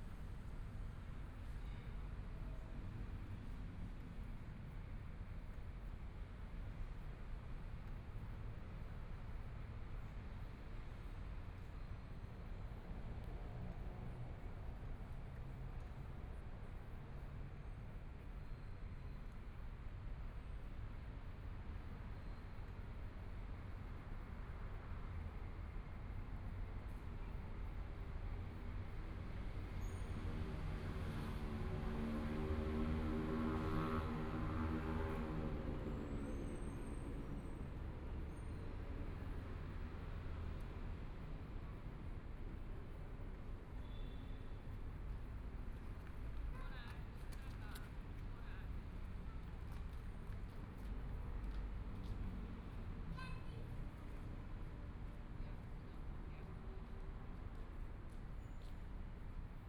Night in the park, Traffic Sound
Please turn up the volume
Binaural recordings, Zoom H4n+ Soundman OKM II